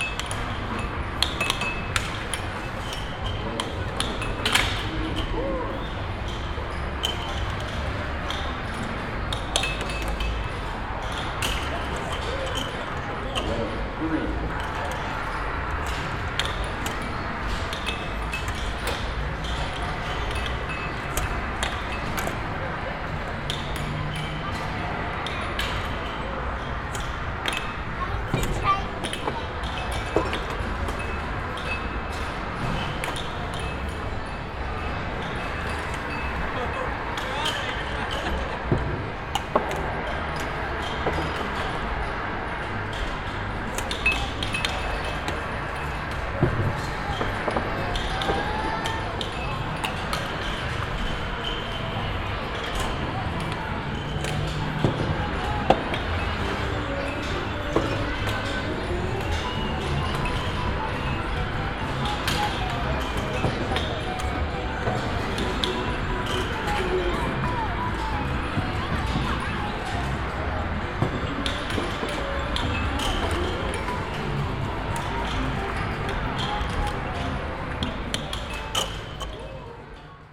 Vereinigtes Königreich, Brighton - Brighton, pier, game tent
Inside a tent construction with several different coin game machines. The sound of game machines - fun for money.
international city scapes - topographic field recordings and social ambiences